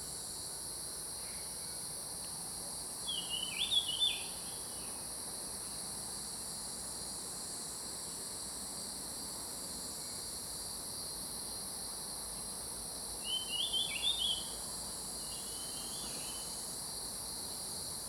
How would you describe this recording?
Birds singing, Cicadas sound, Zoom H2n MS+XY